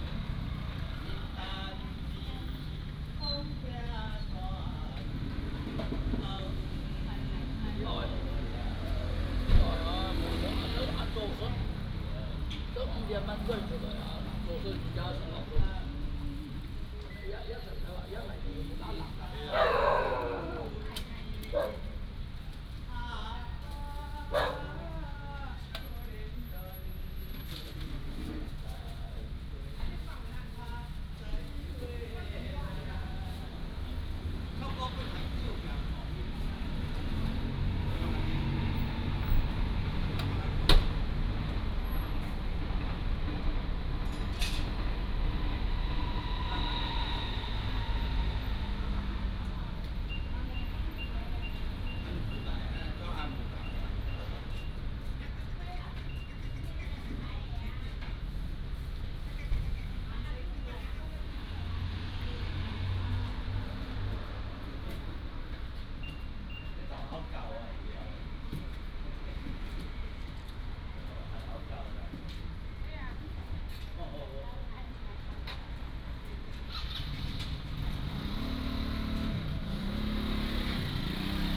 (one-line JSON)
{"title": "Xinxing Rd., Tongluo Township - Small bus station", "date": "2017-02-16 10:46:00", "description": "Small bus station, Traffic sound, The old man is singing, Dog sounds", "latitude": "24.49", "longitude": "120.79", "altitude": "157", "timezone": "GMT+1"}